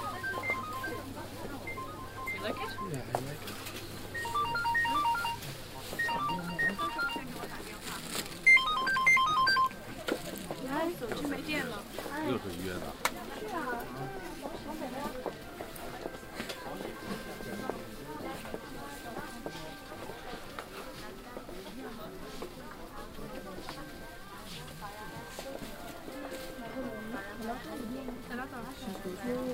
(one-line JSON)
{"title": "beijing, kleidermarkt, indoor", "description": "beijing cityscape - one of several indoor clothing market - place maybe not located correctly -please inform me if so\nproject: social ambiences/ listen to the people - in & outdoor nearfield recordings", "latitude": "39.89", "longitude": "116.47", "altitude": "42", "timezone": "GMT+1"}